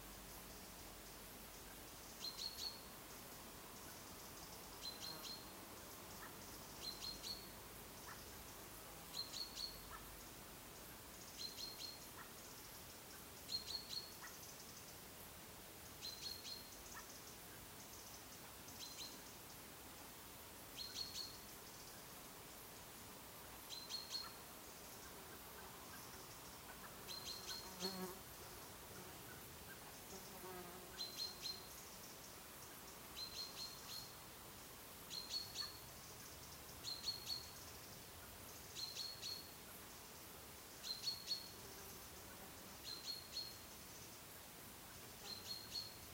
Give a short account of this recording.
garden, near forest with birds. stafsäter recordings. recorded july, 2008.